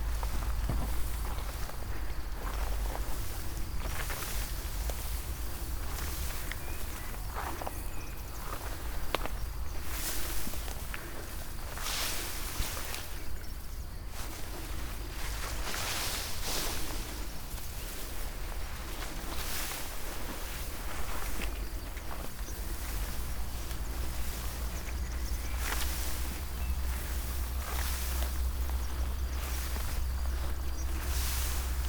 path of seasons, vineyard, piramida - uphill walk through high grass, breathing
Maribor, Slovenia, 29 May 2014